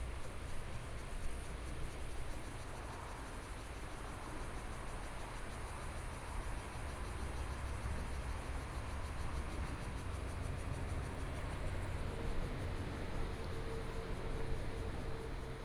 鹿鳴橋, Beinan Township - Embankment side
Stream, Traffic Sound